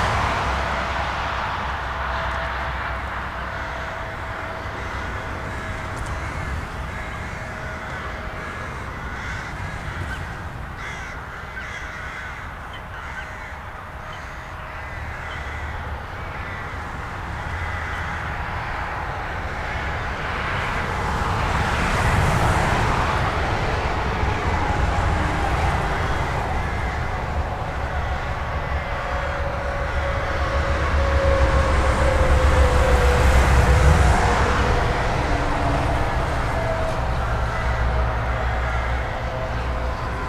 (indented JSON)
{
  "title": "Beaumont, Aire de repos de Beaumont",
  "date": "2010-03-12 14:17:00",
  "description": "France, Auvergne, road traffic, crows, trucks",
  "latitude": "46.76",
  "longitude": "3.14",
  "timezone": "Europe/Berlin"
}